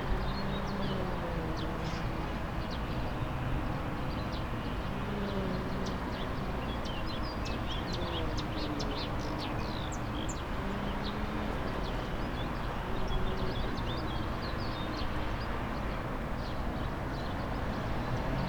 Poznan, balcony - lawnmowers race
maintenance workers racing their lawnmowers since six in the morning. drilling sound of the engines permeated the usually calm area for three straight hours.